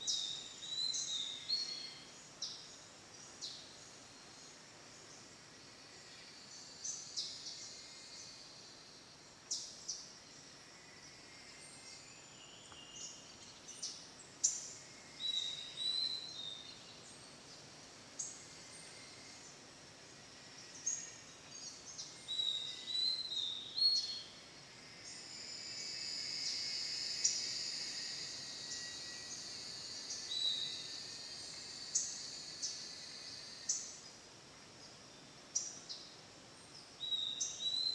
bird's soundscape and wind noise in trees, São Sebastião da Grama - SP, Brasil - bird's soundscape and wind noise in trees
This soundscape archive is supported by Projeto Café Gato-Mourisco – an eco-activism project host by Associação Embaúba and sponsors by our coffee brand that’s goals offer free biodiversity audiovisual content.